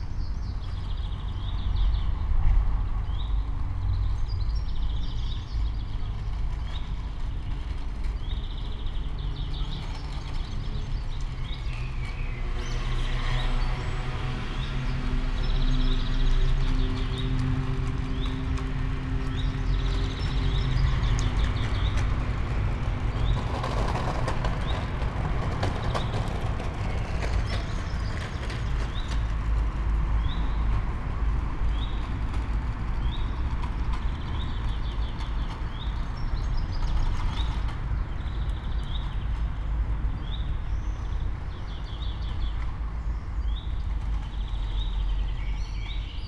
all the mornings of the ... - jun 9 2013 sunday 09:23
Maribor, Slovenia, 9 June